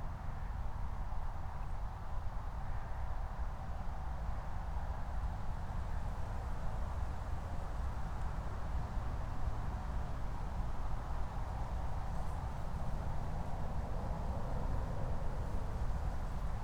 Moorlinse, Berlin Buch - near the pond, ambience
04:19 Moorlinse, Berlin Buch